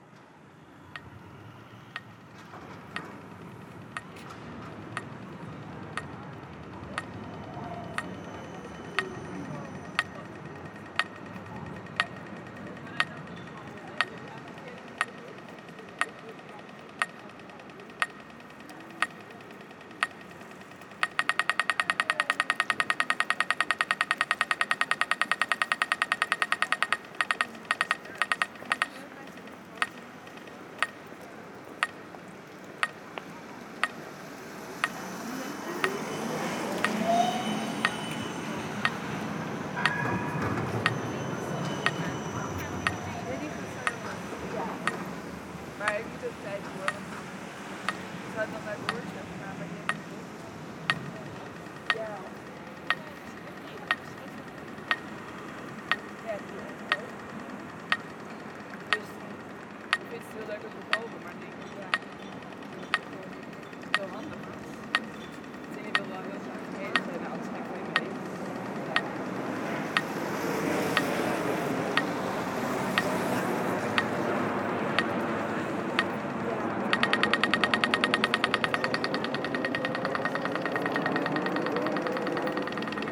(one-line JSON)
{"title": "Amsterdam, Nederlands - Red light", "date": "2019-03-28 11:00:00", "description": "The sound of a red light into a dense traffic, tramways breaking through and planes from the Schiphol airport.", "latitude": "52.37", "longitude": "4.89", "altitude": "3", "timezone": "Europe/Amsterdam"}